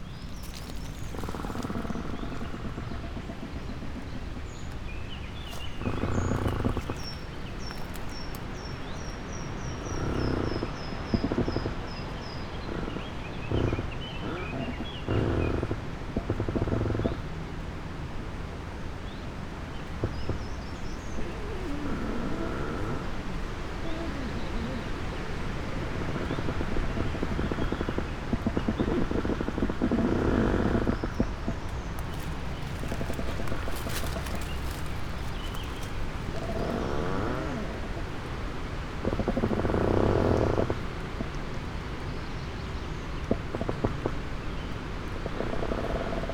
two trees, piramida - trees creak, may winds, walk around